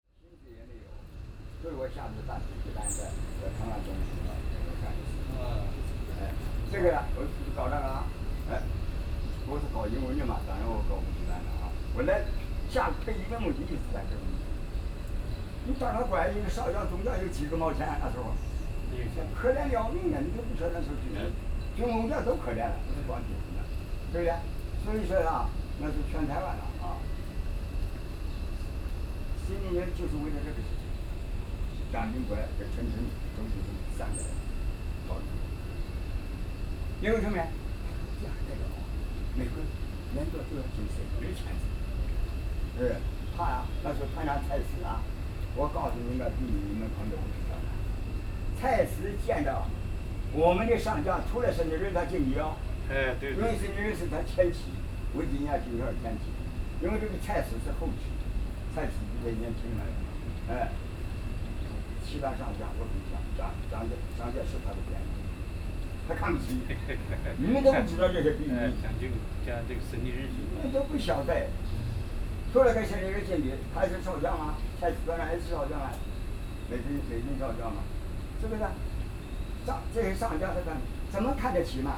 Taipei Botanical Garden, Taiwan - Chat between elderly
Chat between elderly, Sony PCM D50 + Soundman OKM II